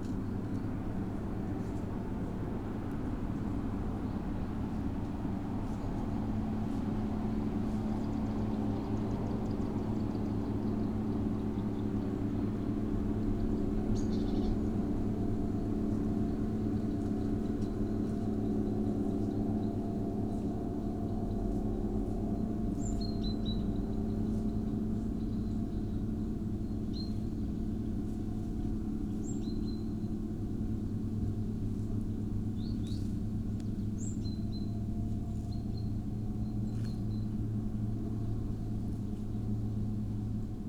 small microphones placed in the stone altar on Veliuona mound
Lithuania